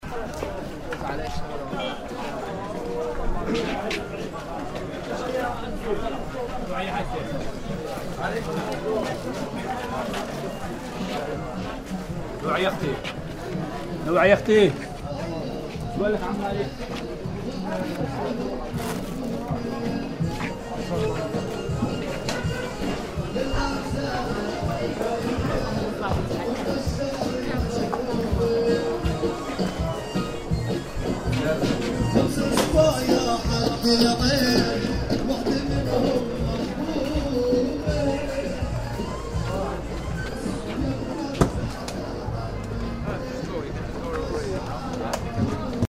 naplouse - traveling dans le souk
8 December 2011, 14:19